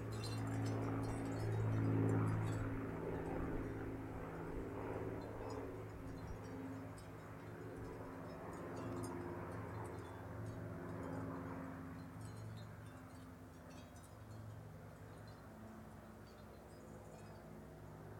Flying Heritage Museum - Air Show
My house is about 2½ miles from Paine Field, where Paul Allen's Flying Heritage & Combat Armor Museum is headquartered. On weekends during the summer, we are frequently treated(?) to flyovers of some of his vintage WWII fighter planes; sometimes 3 or 4 of them together in formation. They fly circles over us, until they run out of gas and return to the field. They are loud.
Major elements:
* World War Two-era prop airplanes (I missed the little red jet earlier)
* Oystershell windchimes
* Distant leaf blowers
* Birds
* Delivery vans
Snohomish County, Washington, United States of America, 20 July 2019